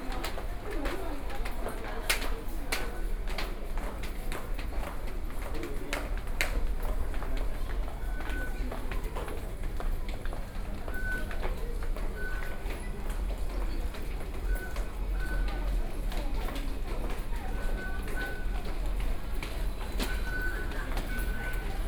Sec., Zhongxiao E. Rd., 大安區, Taipei City - Underground street
Daan District, Taipei City, Taiwan